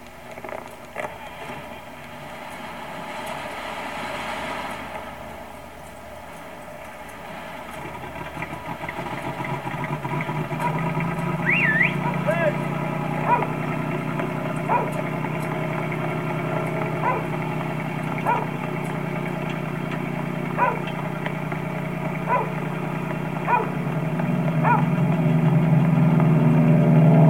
Rue Beppo de Massimi, Toulouse, France - the old radio station building
looped audio message from the old radio station building
on 7 December 1936 the station received the Following message " have cut power on aft right-hand engine "
from the Latécoère 300 christened Croix du Sud Flown by Jean Mermoz.
This was to be his last message before he disappeared over the Atlantic .
Captation : zoom h4n
France métropolitaine, France, 22 May